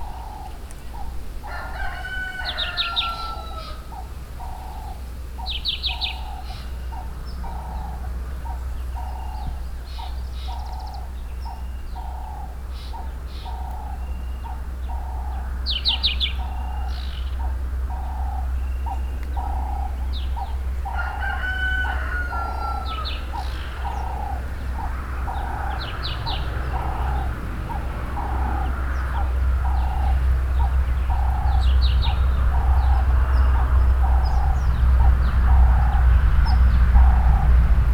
{"title": "Lupane, Zimbabwe - morning sounds at CoCont...", "date": "2018-10-14 07:55:00", "description": "...we are at CoCont (Cont's place) somewhere in the Lupane bushland... the road between Bulawayo and Vic Falls is near by and a passing car or bus is heard occasionally... Sunday morning...", "latitude": "-18.90", "longitude": "27.73", "altitude": "1000", "timezone": "Africa/Harare"}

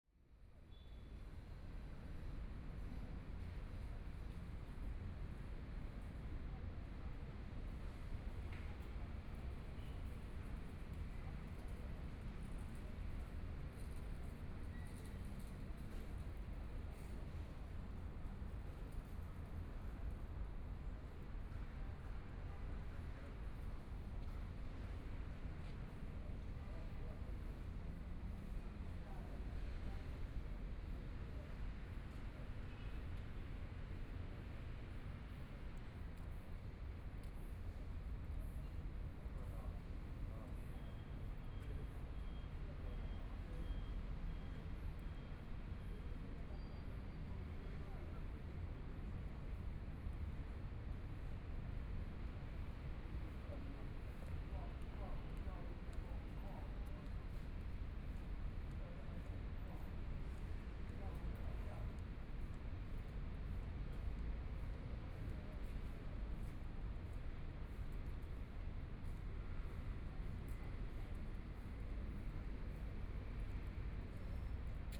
{"title": "中山區, Taipei City - Sitting in front of the temple", "date": "2014-01-20 17:32:00", "description": "Sitting in front of the temple, Traffic Sound, Motorcycle sound, Binaural recordings, Zoom H4n+ Soundman OKM II", "latitude": "25.06", "longitude": "121.52", "timezone": "Asia/Taipei"}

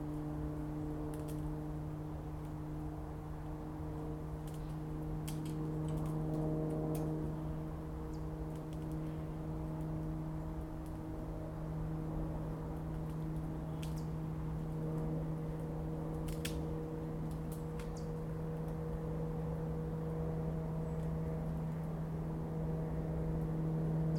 {"title": "Jeanlouks spot - Daytime RAVeden", "date": "2019-10-25 17:30:00", "description": "Helped some friends set up a rave, it was really fun! It brought back memories of what I would feel as a child building huts and campfires. Being surrounded by trees with the distant sound of the industrial zone and motorway was quite unusual too.\nSo basically this is a recording of us making constructions out of pallets and bamboo.\nI made another recording from almost the same spot during the night.\nUsed a zoom H2n in 4ch mode and merged them with audacity", "latitude": "43.55", "longitude": "1.41", "altitude": "151", "timezone": "Europe/Paris"}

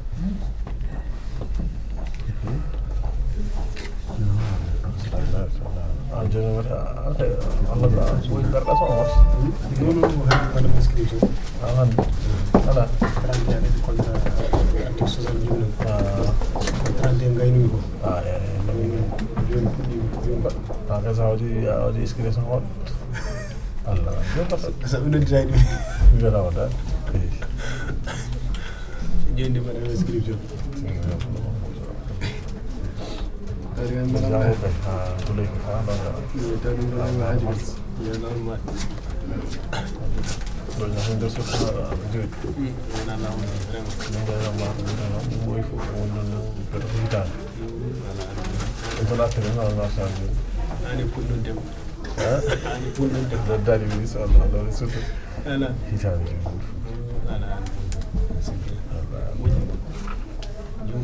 {"title": "Brussels, Rue de Suède, Union Office for unemployment", "date": "2012-01-03 08:33:00", "description": "People waiting to be registered for unemployment.\nPCM-M10 internal microphones.", "latitude": "50.83", "longitude": "4.34", "altitude": "25", "timezone": "Europe/Brussels"}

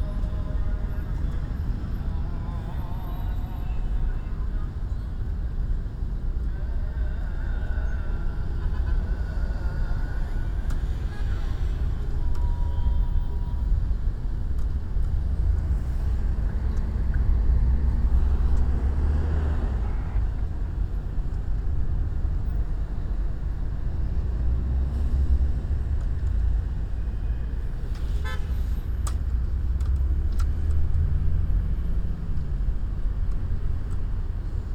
{"title": "Istanbul, Taksim Square - Traffic at Taksim Square", "date": "2009-08-21 17:00:00", "latitude": "41.04", "longitude": "28.99", "altitude": "83", "timezone": "Europe/Berlin"}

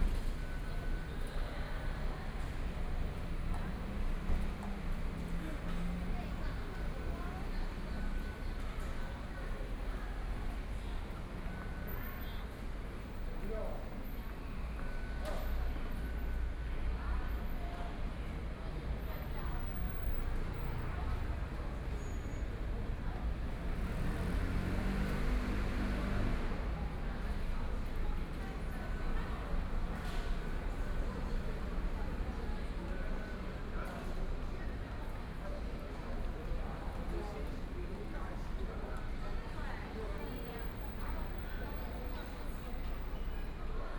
{"title": "Shilin Station, Taipei - Ambient sound in front of the station", "date": "2013-11-11 20:45:00", "description": "Ambient sound in front of the station, sitting in the MRT station entrance And from out of the crowd, MRT train stops on the track and off-site, Binaural recordings, Zoom H6+ Soundman OKM II", "latitude": "25.09", "longitude": "121.53", "altitude": "8", "timezone": "Asia/Taipei"}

